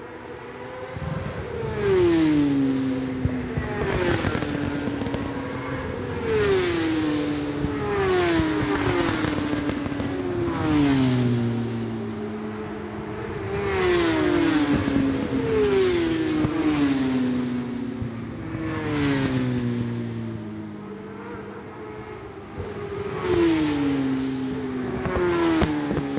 West Kingsdown, UK - British Superbikes 2004 ... qualifying two ...
British Superbikes ... qualifying two ... Dingle Dell ... Brands Hatch ... one point stereo mic to mini disk ...